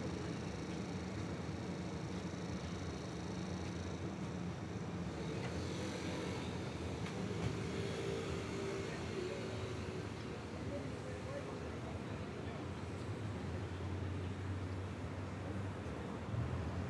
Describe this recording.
Street ambience sounds recorded on Myrtle Ave/Forest Ave on a Sunday afternoon. Sounds of people walking, carts, cars and music.